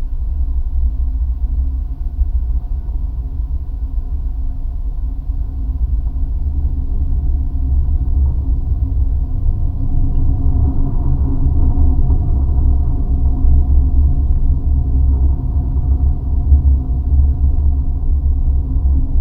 Geophone on the rails of the bridge.
The highest Lithuania’s pedestrian and bicycle bridge (project by V. Karieta) was built in Alytus in 2015, on the remains of former railway bridge piers. It has been registered in the Book of Lithuanian Records. It is 38.1 m in height and 240,52 m in length. It was officially opened on 4 June 2016. The bridge connects two bicycle paths running on the both banks of the Nemunas River that divides the town.
In the second half of the 19th century, a military railway to Alytus classified as a fortress of the 3rd class, was built to reinforce the western borders of the Russian empire (Lithuania then was a part of it). A 33 m high and 240.5 m long bridge of an innovative cantilever design was built in this place in 1897 to 1899 (project by N. Beleliubskis). The carriageway was designed for rail traffic and horse-driven carriages.
During World War I, in 1915, the retreating tsarist army blew up the bridge.